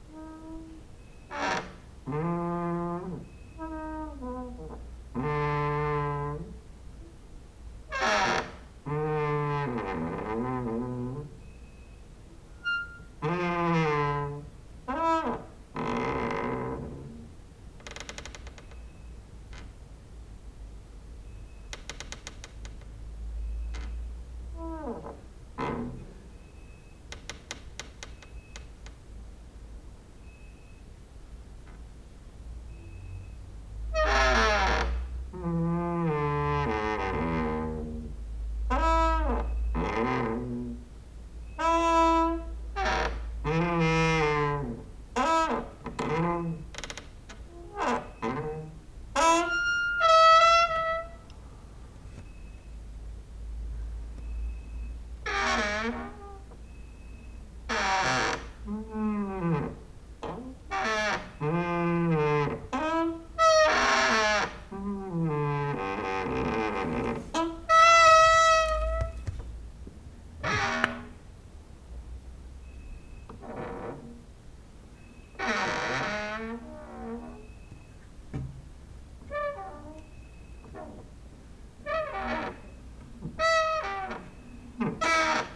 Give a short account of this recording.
cricket outside, exercising creaking with wooden doors inside